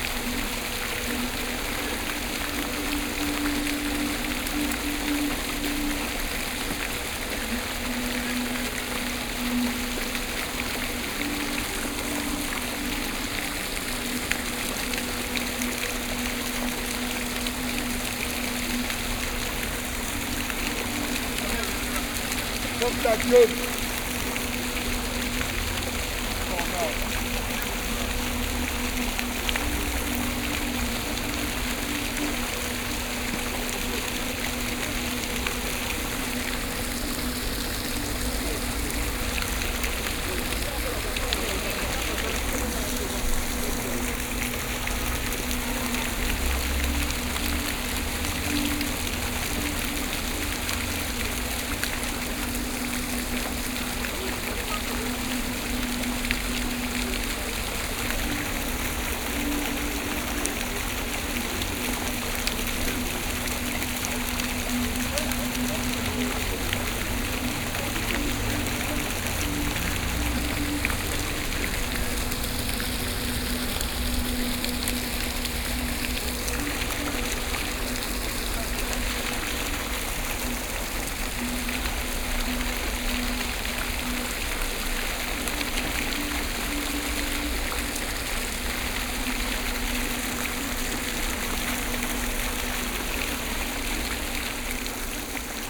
Paris, Square Alban Satragne, the fountain